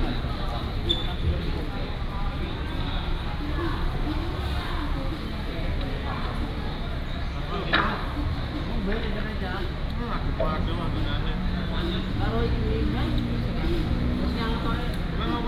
彰化客運彰化站, Changhua City - At the passenger terminal
At the passenger terminal, Traffic sound, Station broadcasting